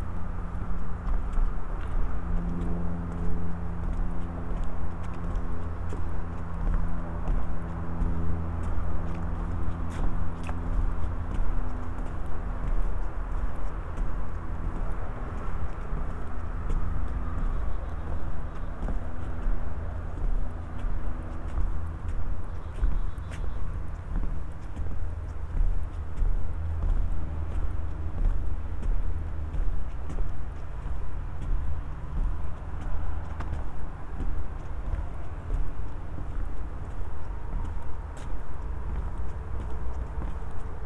Wetlands area and elevated boardwalk, Heritage Park Trail, Smyrna, GA, USA - Traversing the boardwalk
Crossing over the wooden boardwalk at the Heritage Park Trail. Footsteps on wooden planks can be heard throughout. The zipper on my sweatshirt jangled during the walk and got picked up on the recording. A few breaths can also be heard, but I did what I could to keep myself out of the recording.
[Tascam Dr-100mkiii w/ Roland CS-10EM binaural microphones/earbuds]
Georgia, United States, 29 December 2020